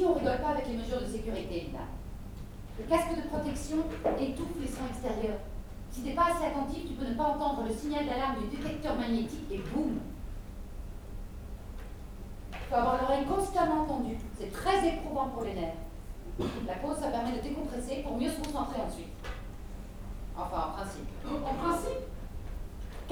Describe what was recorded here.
This recording is the beginning of a theatrical performance. This is the true story of four women doing the minesweeper in Lebanon. As this theater is important in local life, it was essential to include it in the Louvain-La-Neuve sonic map. The short sound of music is free. Theater administratives helped me in aim to record this short moment. The real name of the drama is : Les démineuses.